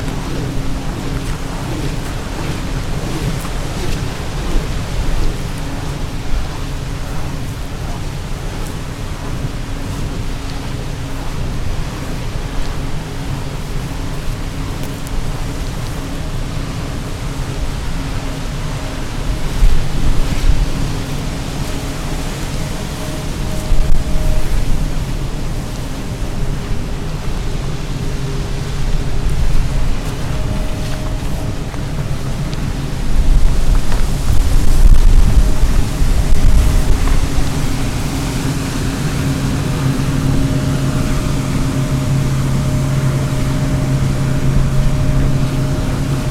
VÅRDKASBACKEN, Härnösand, Sverige - moving under the windmill
Recording made below the wind turbine at Vårdkasen in Härnösand. The recording was performed with movement from the front in the wind turbin to the back and with 2 omnidirectional microphone's.